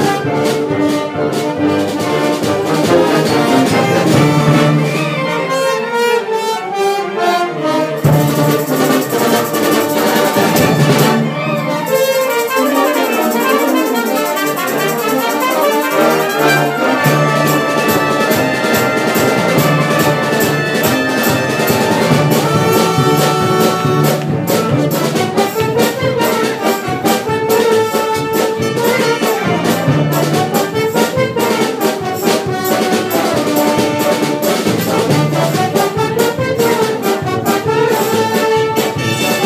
Viana do Castelo, Portugal - Orchestra
Orchestra in Viana Do Castello, Portugal, iPhone 5S
20 August 2016